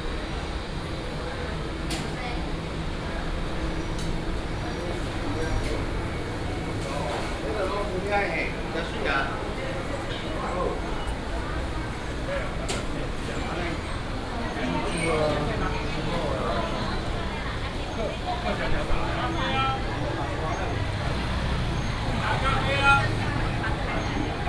大雅公有市場, Taichung City - Public retail market
walking in the Public retail market, Binaural recordings, Sony PCM D100+ Soundman OKM II
Taichung City, Taiwan, 24 September 2017